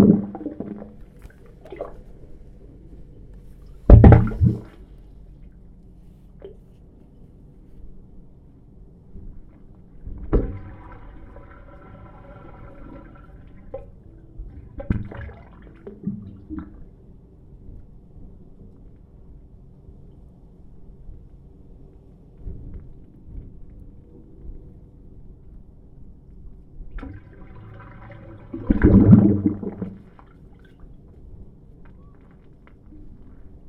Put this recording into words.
Wave Organ sounds recorded with a Zoom